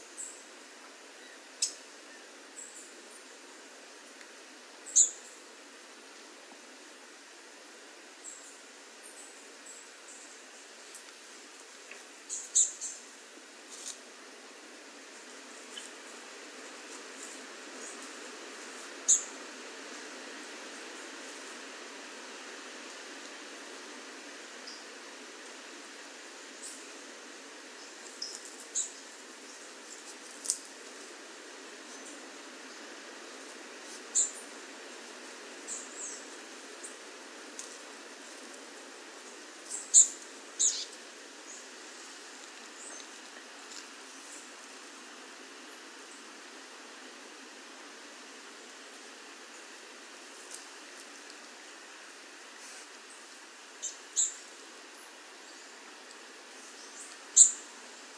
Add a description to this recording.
This soundscape archive is supported by Projeto Café Gato-Mourisco – an eco-activism project host by Associação Embaúba and sponsors by our coffee brand that’s goals offer free biodiversity audiovisual content.